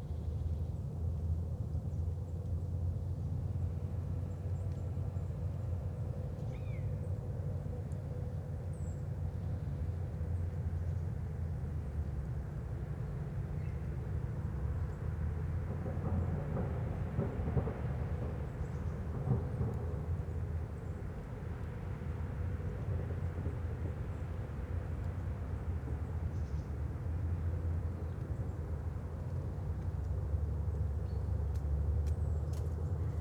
Beselich Niedertiefenbach, Ton - forest ambience /w distant sounds of work
Saturday early afternoon at the pond, distant sounds of work and maybe traffic
(Sony PCM D50, Primo EM172)